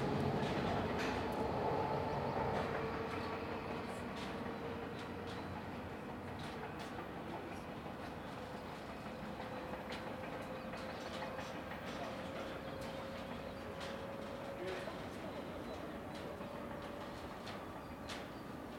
August-Bebel-Allee 21, 15732 Eichwalde, Deutschland - S-Bahnhof
S-Bahnhof mit Baustellenstahltreppe Zoom H4n, ProTools